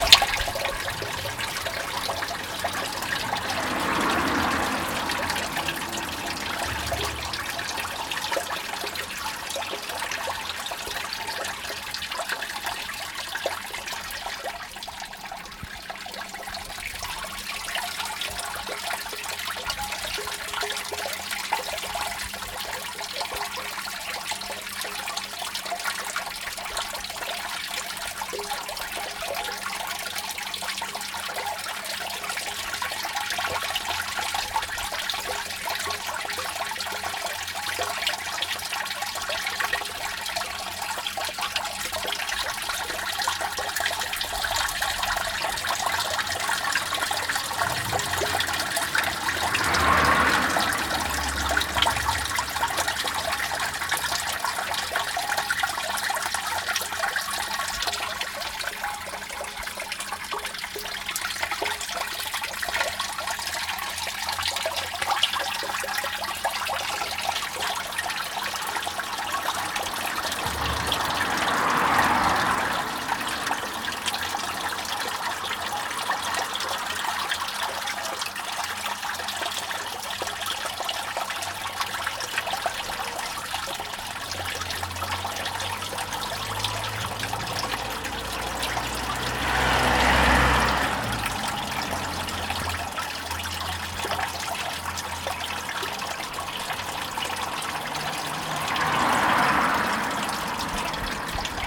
Newington Road, Newcastle upon Tyne, UK - Storm drain and cars, Newington Road
Walking Festival of Sound
13 October 2019
Storm drain, cars, rain/water.
North East England, England, United Kingdom, 13 October 2019